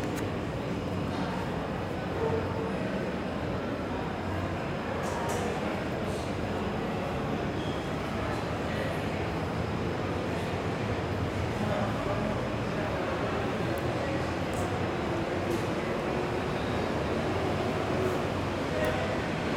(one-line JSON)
{"title": "Calle 67 No. 53 - 108 Bloque 9, oficina 243, Medellín, Aranjuez, Medellín, Antioquia, Colombia - Tarde Ocupada", "date": "2022-02-15 11:00:00", "description": "Una tarde que empieza tranquila en el boque 9 de la Universidad de Antioquia, pero que lentamente mientras las clases inician se puede escuchar un poco mas de la vida universitaria desarrollarse", "latitude": "6.27", "longitude": "-75.57", "altitude": "1468", "timezone": "America/Bogota"}